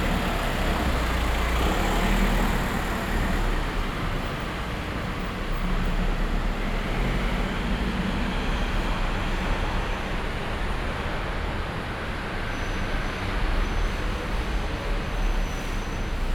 Schwäbisch Gmünd, Deutschland - Parking garage of a shopping center
The parking garage of the shopping center "City Center" in the early afternoon.
Schwäbisch Gmünd, Germany